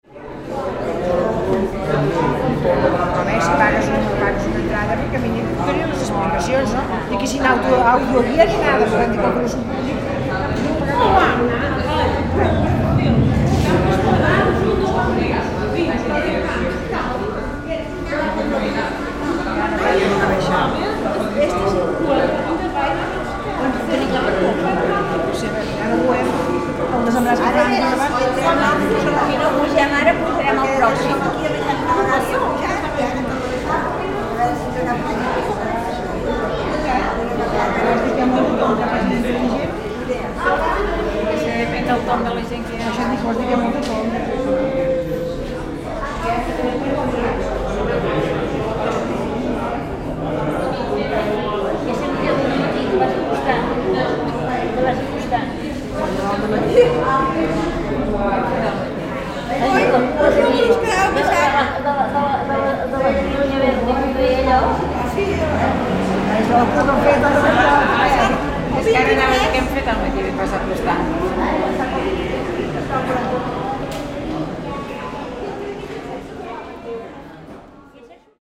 Porto, Portugal - People waiting at funicular
People waiting at funicular, near Ponte Luis, Porto, Portugal, Zoom H6